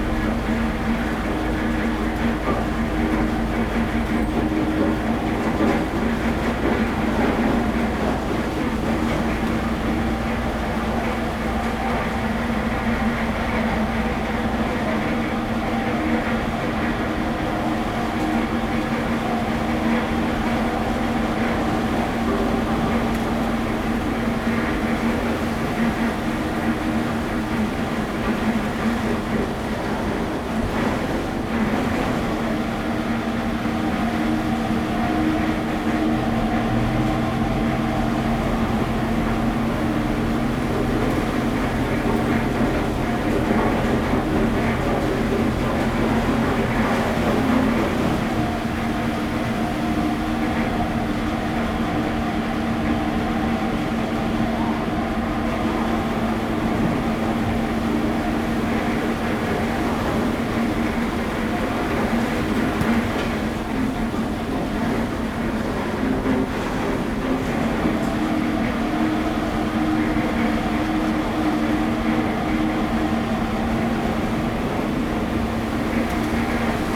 馬公港, Penghu County - Ice making factory
In the fishing port, Ice making factory, The big blocks of ice delivered to the fishing boat
Zoom H2n MS+XY
22 October 2014, ~07:00